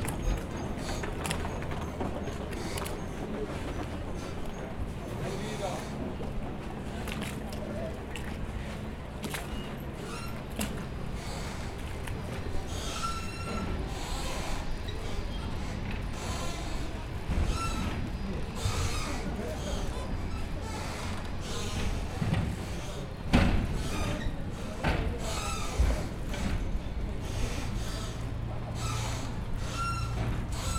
Venice, Province of Venice, Italy - water and boats
docs moving, water and metal noises, boats and people